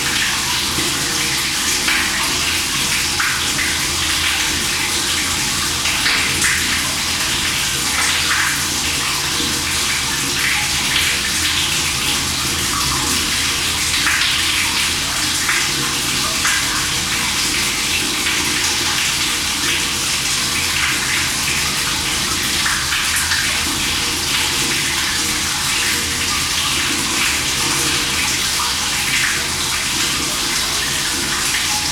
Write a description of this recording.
Recorded with a pair of DPA 4060s and a Marantz PMD661